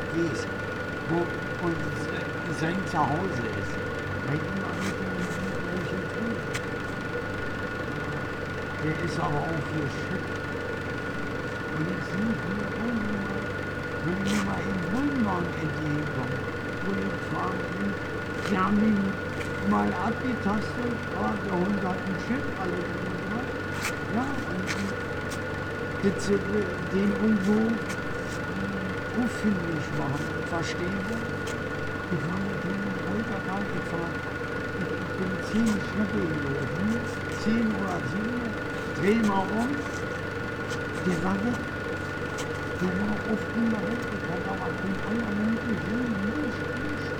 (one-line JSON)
{"title": "berlin: friedelstraße - the city, the country & me: man looking for his dog", "date": "2014-02-06 04:24:00", "description": "sewer works site early in the morning, water pump, a man comes around looking for his dog and bums a cigarette\nthe city, the country & me: february 6, 2014", "latitude": "52.49", "longitude": "13.43", "timezone": "Europe/Berlin"}